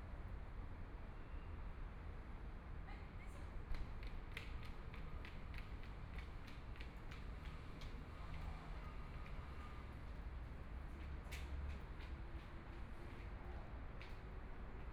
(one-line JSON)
{"title": "JinBei Park, Taipei City - in the Park", "date": "2014-02-15 13:52:00", "description": "Sitting in the park, Cloudy day, Pigeons Sound, Traffic Sound, Binaural recordings, Zoom H4n+ Soundman OKM II", "latitude": "25.06", "longitude": "121.54", "timezone": "Asia/Taipei"}